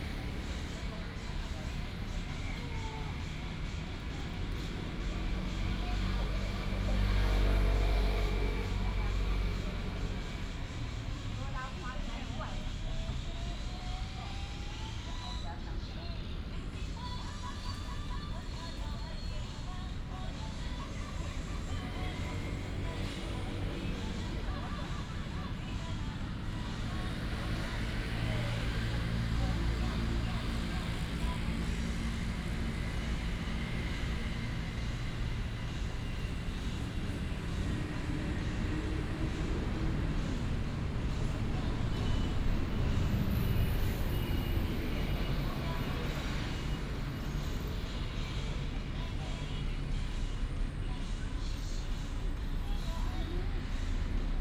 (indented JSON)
{
  "title": "赤土崎新村, Hsinchu City - Morning in the park",
  "date": "2017-09-21 06:34:00",
  "description": "Used to be a military residential area, It is now green park, Birds call, Healthy gymnastics, Binaural recordings, Sony PCM D100+ Soundman OKM II",
  "latitude": "24.80",
  "longitude": "120.99",
  "altitude": "41",
  "timezone": "Asia/Taipei"
}